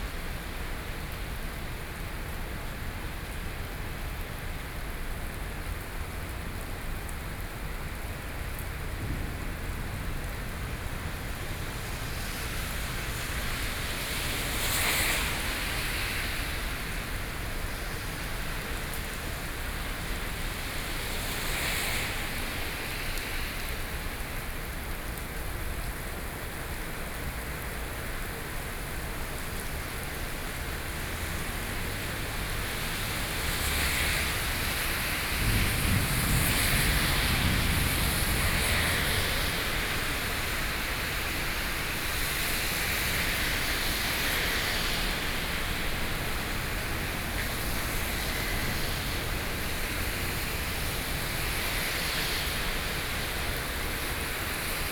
Taipei, Taiwan - Before the coming storm
Before the coming storm, Sony PCM D50 + Soundman OKM II